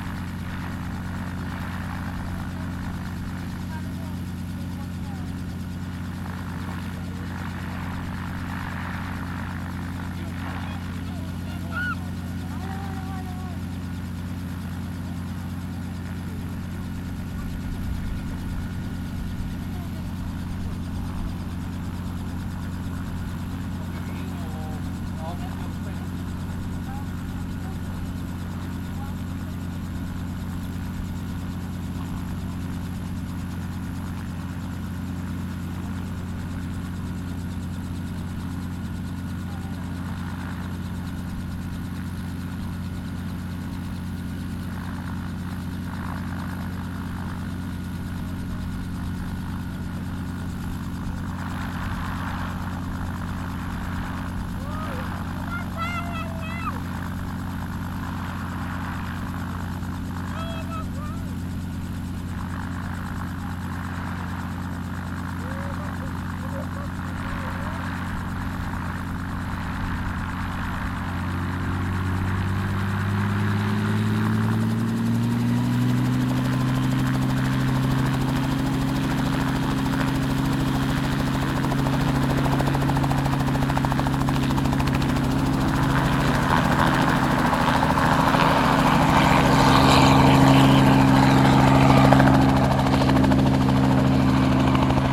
{"title": "Flugplatz Bonn-Hangelar, Richthofenstraße, Sankt Augustin, Deutschland - Ein Hubschrauber / A helicopter", "date": "2014-10-12 12:19:00", "description": "Ein Hubschrauber steht im Leerlauf am Boden und nimmt zwei Fluggäste für den nächsten Rundflug auf, dann startet er. Gleichzeitig starten Flugzeuge.\nA helicopter is idling on the ground and takes two passengers on the next flight, then it starts. At the same time launch aircraft.", "latitude": "50.77", "longitude": "7.16", "altitude": "63", "timezone": "Europe/Berlin"}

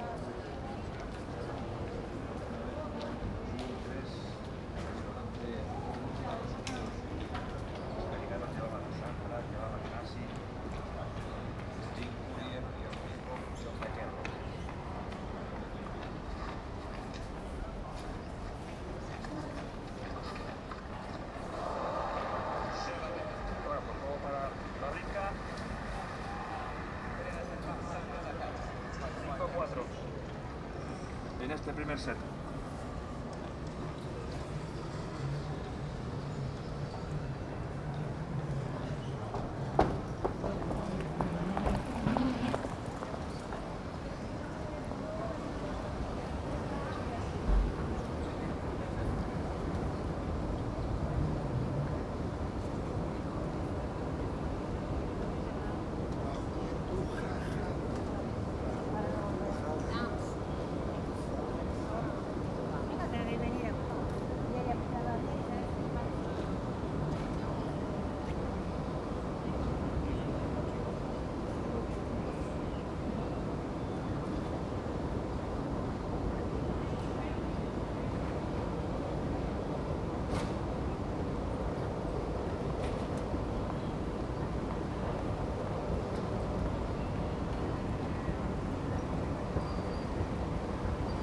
{"title": "Barcelona Airport (BCN), El Prat de Llobregat, Provinz Barcelona, Spanien - airport atmosphere: people near transport band and speakers", "date": "2014-04-21 10:08:00", "description": "TASCAM DR-100mkII with internal Mics", "latitude": "41.31", "longitude": "2.08", "altitude": "5", "timezone": "Europe/Madrid"}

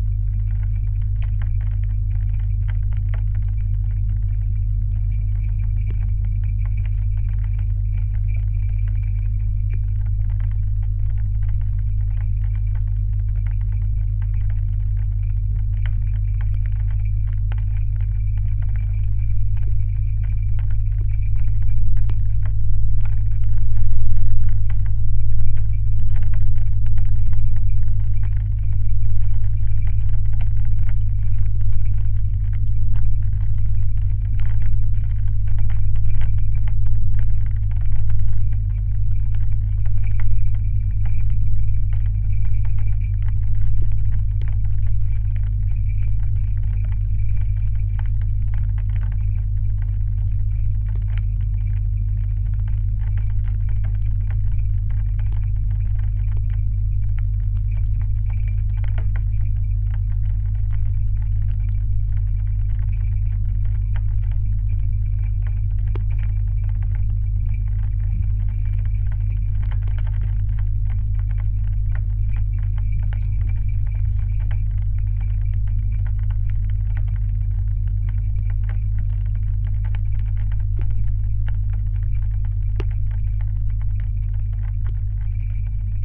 {"title": "Užpaliai, Lithuania, watertower", "date": "2021-01-24 15:00:00", "description": "metallic waterrower, still working. geophone and contact microphones", "latitude": "55.63", "longitude": "25.57", "altitude": "96", "timezone": "Europe/Vilnius"}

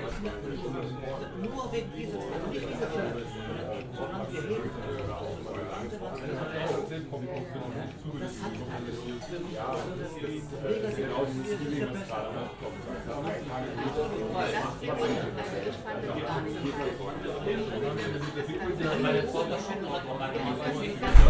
{"title": "Carmerstraße, Berlin, Deutschland - dicke wirtin", "date": "2021-12-14 22:50:00", "description": "old berlin pub evening", "latitude": "52.51", "longitude": "13.32", "altitude": "42", "timezone": "Europe/Berlin"}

